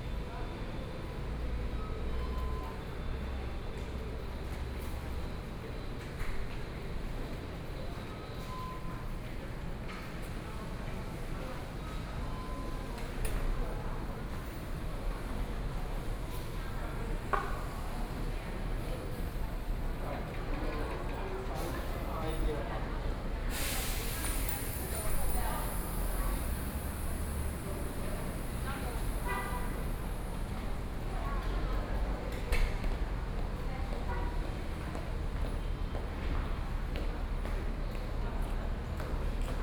宜蘭轉運站, Yilan City - Station hall
Bus Transfer Station, Station hall
Sony PCM D50+ Soundman OKM II